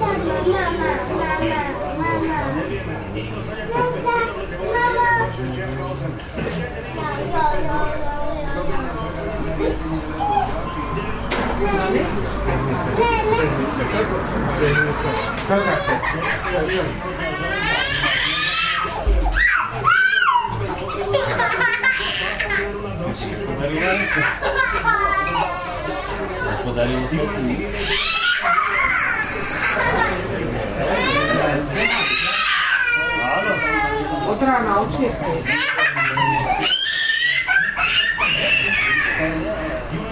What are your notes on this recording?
atmosphere in the beergarden of the pub kolaj. in the background you can hear a slovak dubbed radioplay about 9/11